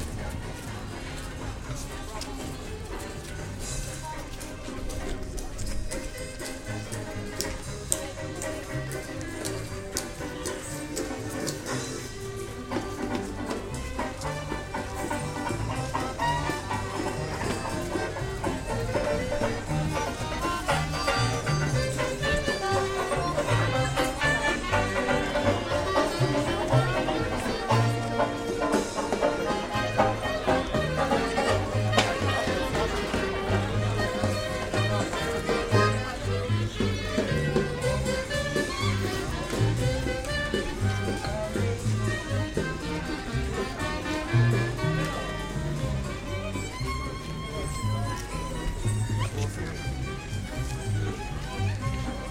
Call for prayer & charleston . Plovdiv
Recorded in motion from inside the mosque, getting out & walking around the central place. There was a band playing & they stopped, waiting the end of the call to restart. Contrast of ambiences & mutual respect...
Plovdiv, Bulgaria, 3 August 2005